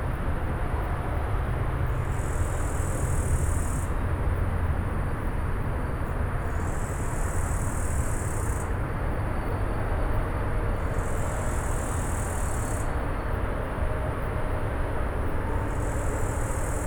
by the canal, Drava river, Maribor - cicada and highway traffic
18 August 2013, 20:11